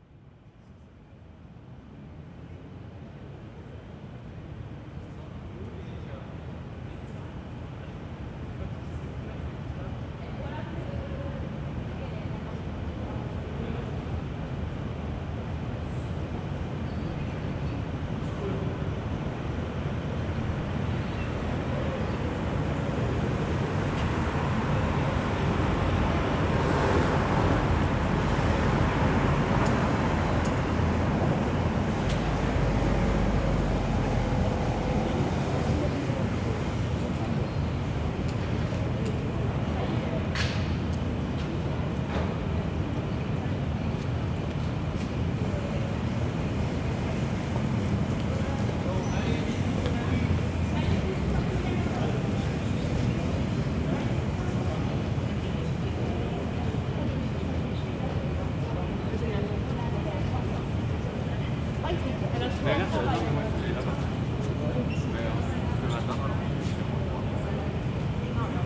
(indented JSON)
{
  "title": "Rue Maurice Fonvieille, Toulouse, France - exit",
  "date": "2022-03-18 18:00:00",
  "description": "commercial gallery exit, air conditioning, street\ncar crossing people talking\nCaptation ZOOMH4n",
  "latitude": "43.60",
  "longitude": "1.45",
  "altitude": "154",
  "timezone": "Europe/Paris"
}